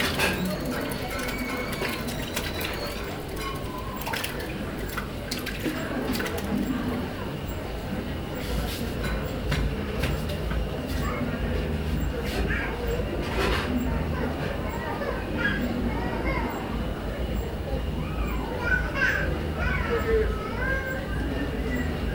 An area of residential blocks and shacks in Makokoba; supper time, having a smoke on a little balcony; listening into the hum of sounds and voices…; my phone rings (I’m part of this life); end of transmission.
archived at: